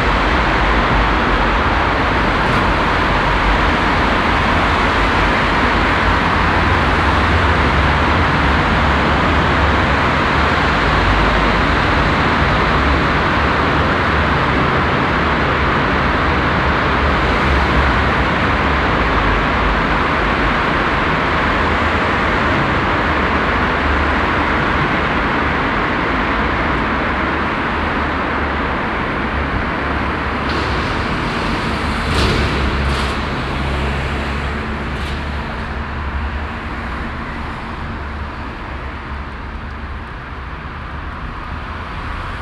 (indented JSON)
{
  "title": "Ostviertel, Essen, Deutschland - essen, city traffic underpass",
  "date": "2014-04-29 13:20:00",
  "description": "An einer Strassenunterführung für den Stadtverkehr, der hier domartig geöffnet ist. Der Klang der vorbeiziehenden Fahrzeuge.\nAt a city traffic underpass that has here a domlike opening. The sound of the passing vehicles.\nProjekt - Stadtklang//: Hörorte - topographic field recordings and social ambiences",
  "latitude": "51.45",
  "longitude": "7.02",
  "altitude": "94",
  "timezone": "Europe/Berlin"
}